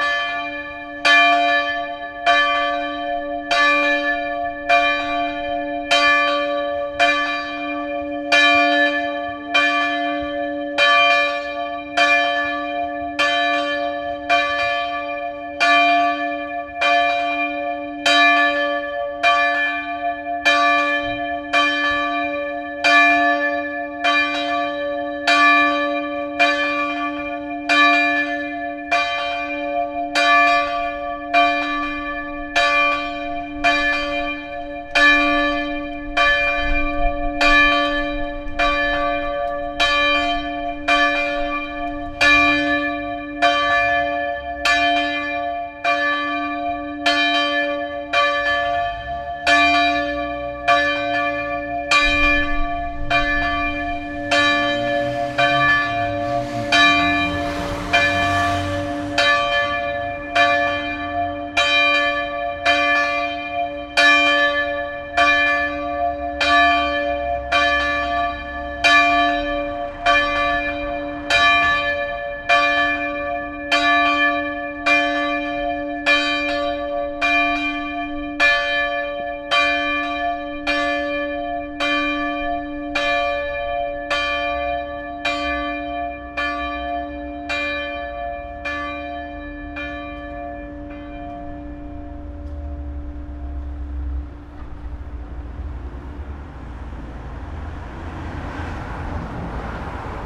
Bruxelles, Rue du melon, les cloches de la Paroisse Sainte Marie / Brussels, Saint Marys Church, the bells.
Vorst, Belgium